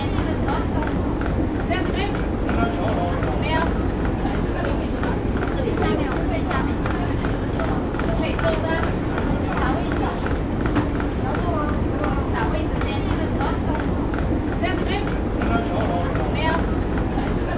Wang Burapha Phirom, Phra Nakhon, Bangkok, Thailand - drone log 09/03/2013
Chao Phraya Express Boat
(zoom h2, build in mic)